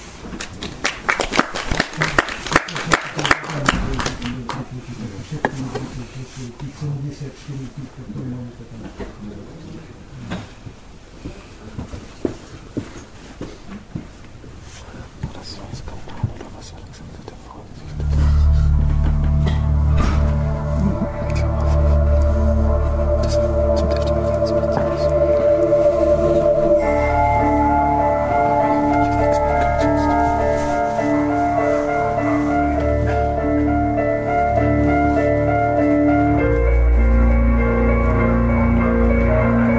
devinska nova ves, u. pavla horova
screening of the staged documentarz film about